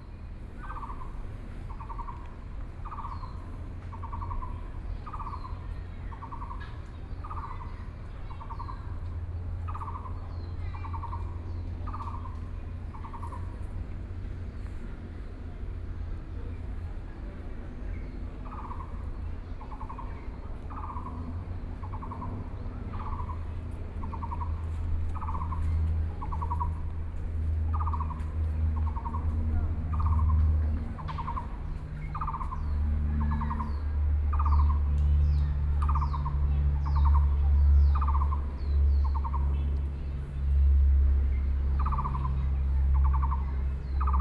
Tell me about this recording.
Birdsong, Frogs sound, Aircraft flying through